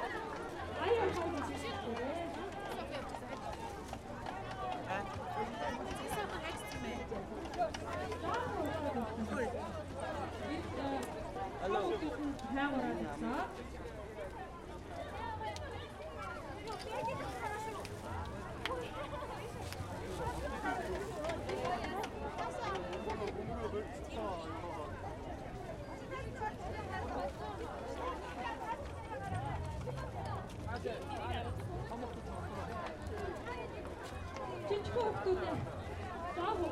Khoroo, Ulaanbaatar, Mongolei - children's day in front of the state department store
children on stage, clowns coming, playing silly music and dance in formation, walk away in the department store
Border Ulan Bator - Töv, Монгол улс, 1 June 2013